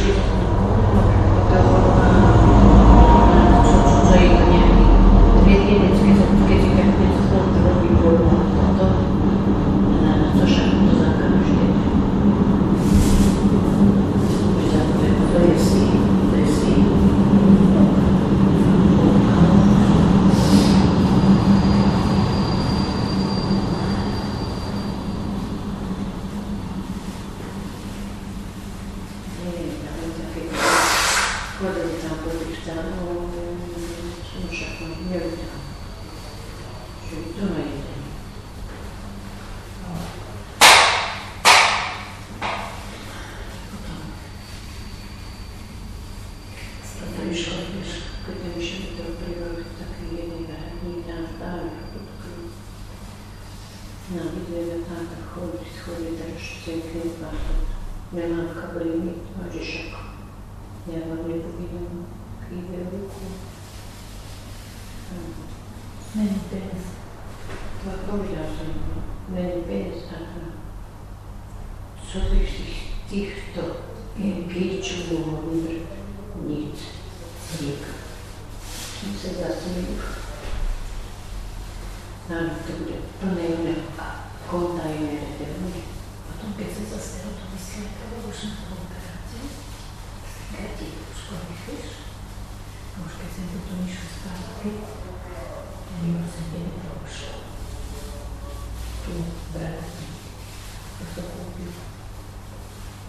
Lamač, Slovenská republika - Ladies chatting in the waiting room of the train station Bratislava-Lamač
Actually they seemed as if using the waiting room as a chat room during wintertime. One of them was treating a thin plastic bag with her hands.
29 November 2012, ~10:00